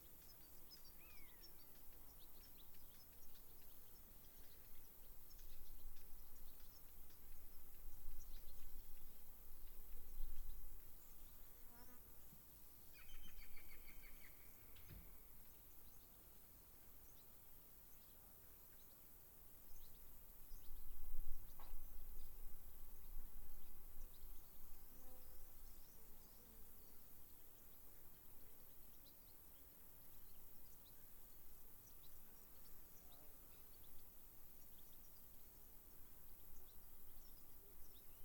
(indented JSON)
{
  "title": "Le Cluzel Bas, Saint-Bonnet-le-Chastel, France - LE CLUZEL AU MATIN NATURE BIRDS",
  "date": "2021-08-15 08:37:00",
  "description": "A quiet morning in le Cluzel, Auvergne, in august. MIX pre 6 ii HMBO 603 stereo cardioid",
  "latitude": "45.45",
  "longitude": "3.65",
  "altitude": "934",
  "timezone": "Europe/Paris"
}